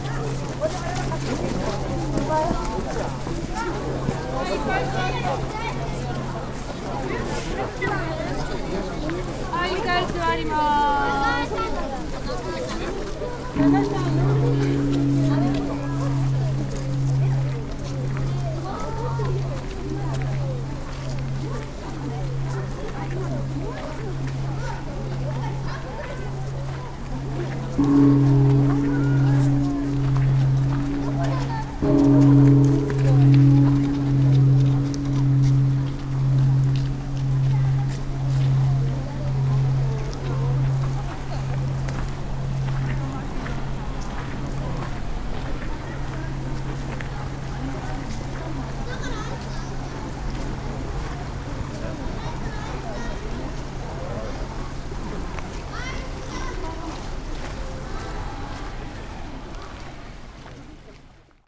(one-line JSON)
{"title": "nikkō, tōshō-g shrine, walkway", "date": "2010-08-22 16:35:00", "description": "moving on the walkway from the shrine approaching the monk temple listening to the temple bell coming closer\ninternational city scapes and topographic foeld recordings", "latitude": "36.76", "longitude": "139.60", "timezone": "Asia/Tokyo"}